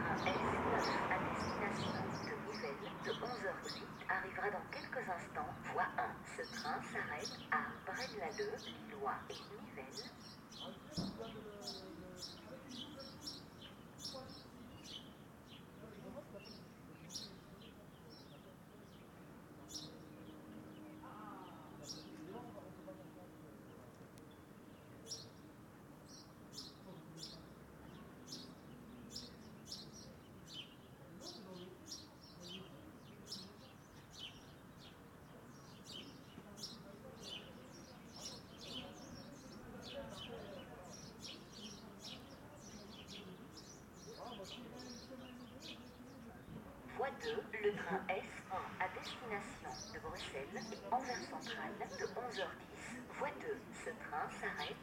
Gare de Waterloo, Pl. de la Gare, Waterloo, Belgique - Platform ambience at the station
Trains passing by, voice announcements.
Tech Note : Ambeo Smart Headset binaural → iPhone, listen with headphones.
Wallonie, België / Belgique / Belgien, 11 April 2022, 11:10